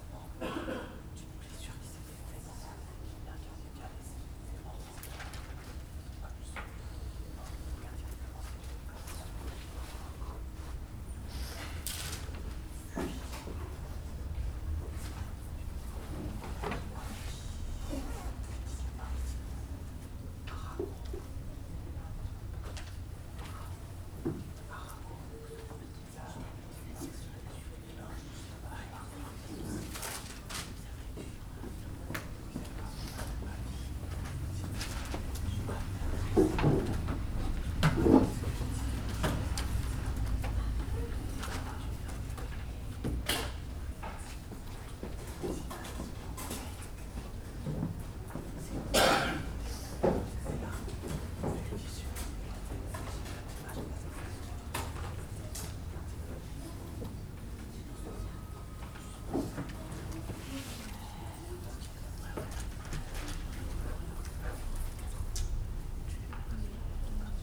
Place de la Légion dHonneur, Saint-Denis, France - Médiatheque Centre Ville - Espace Travaille
The working space, mostly filled with young students working and whispering very respectfully (recorded using the internal microphones of a Tascam DR40).